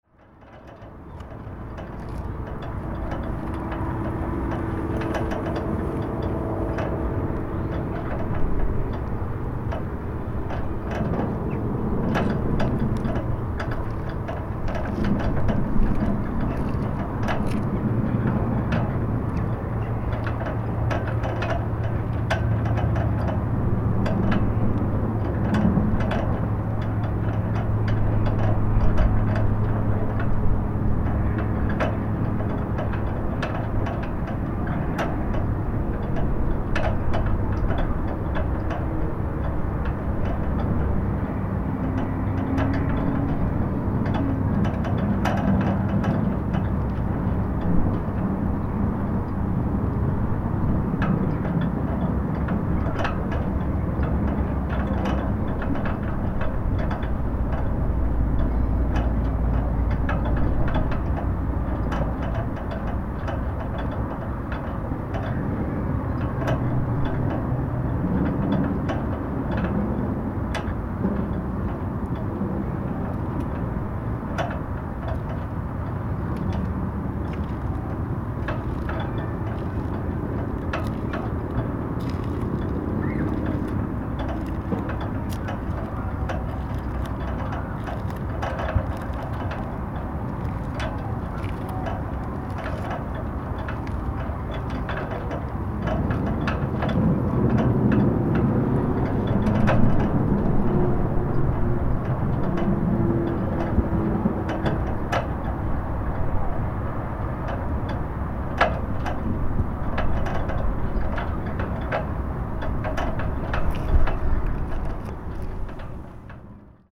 Burg Neuleinigen, Neuleiningen, Deutschland - Flagpole on the Castle-tower
Sound of the moving of the flag on the pole recorded at the bottom of the pole.
13 September 2021, 12:00